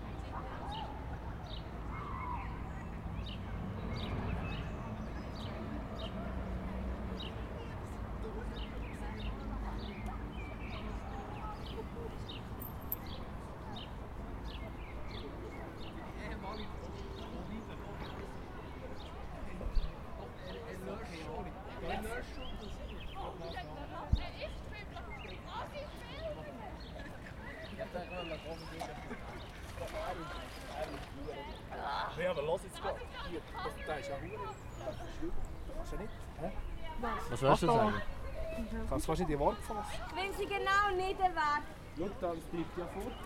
Aareschwimmer.innen, Ausstieg aus dem Fluss im Marzilibad, Temperatur der Aare 16 Grad, Aussentemperatur 21 Grad, Es ist so kalt, dass die Arme und Beine nicht mehr spürbar sind